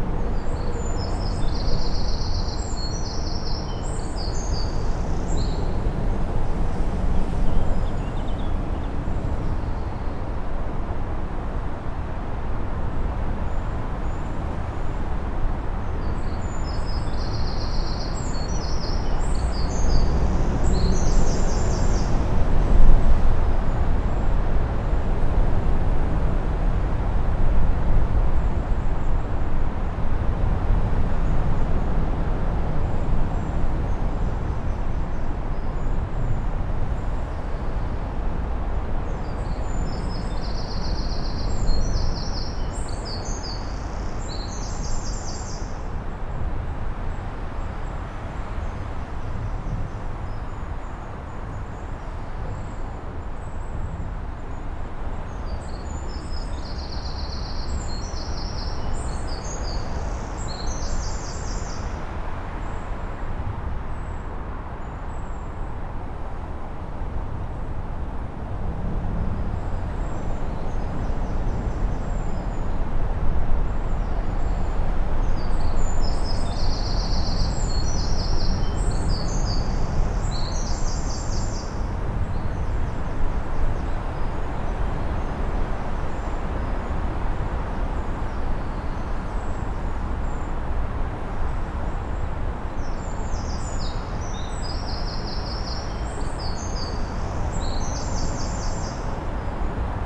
Norwich Southern Bypass, Norwich, UK - Underneath A47 Roadbridge (nearer centre)
Recorded with a Zoom H1n with 2 Clippy EM272 mics arranged in spaced AB.